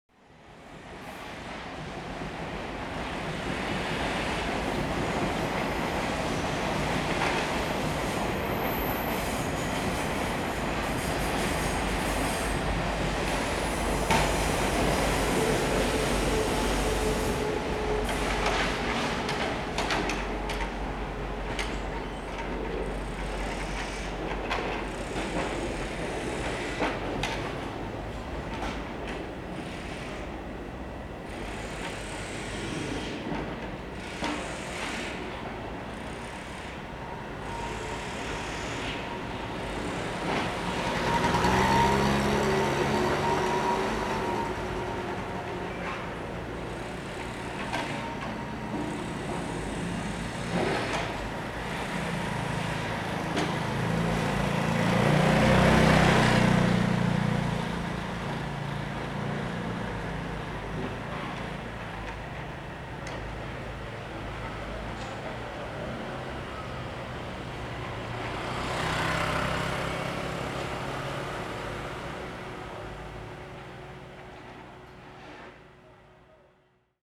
Sanmin District - Environmental Noise
Beside the railway, Sony ECM-MS907, Sony Hi-MD MZ-RH1
高雄市 (Kaohsiung City), 中華民國, March 2012